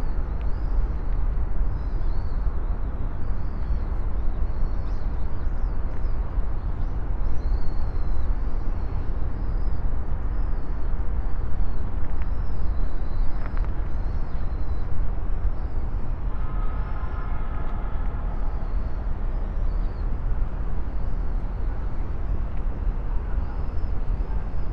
kyu shiba-rikyu gardens, tokyo - small bridge